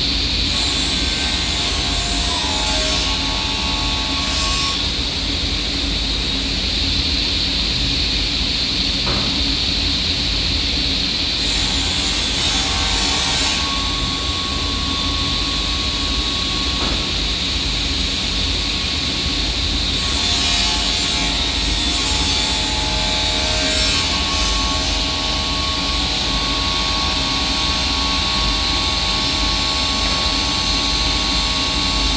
Bergen National Academy of the Arts, woodshop
Bergen, Norway